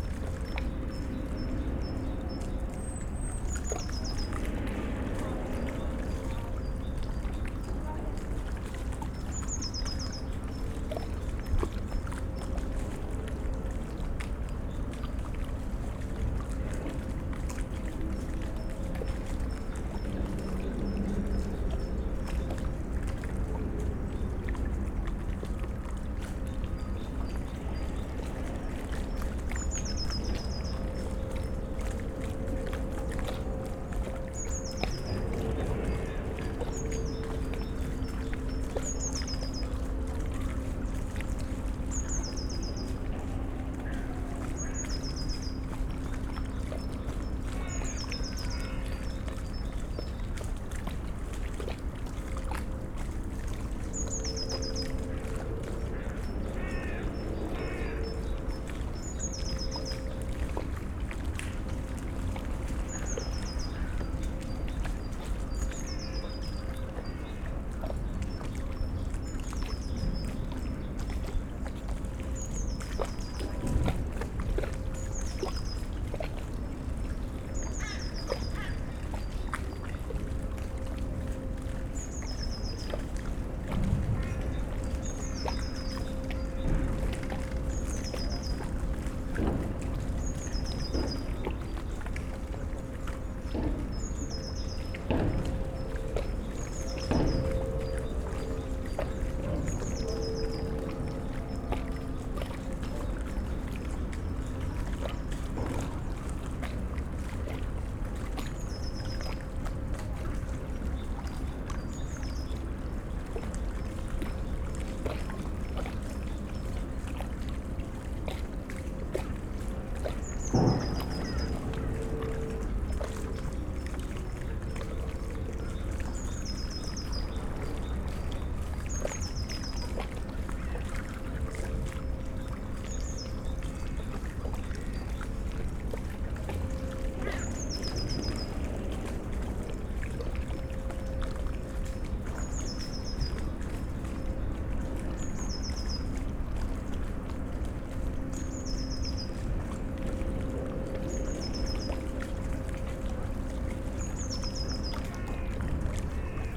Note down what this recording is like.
Berlin Plänterwald, at the river Spree, place revisited, on an early spring day. During the first minute, the rusty squeeky ferris wheel from the nearby abandoned fun fair can be heard, turning in the wind, also sounds from the power plant and cement factory, they seem to be in operation all the time. (Sony PCM D50, DPA 4060)